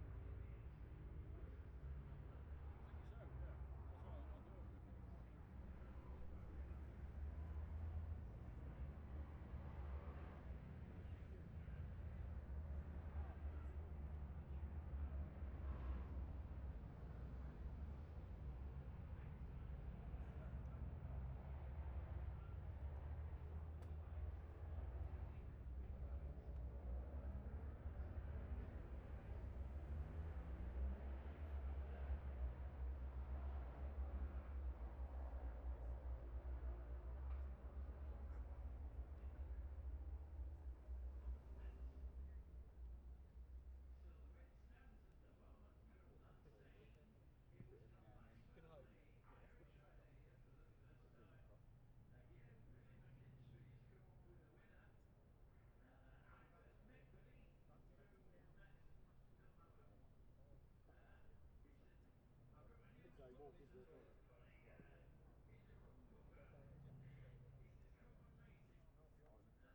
Jacksons Ln, Scarborough, UK - olivers mount road racing 2021 ...
bob smith spring cup ... twins group B practice ... luhd pm-01 mics to zoom h5 ...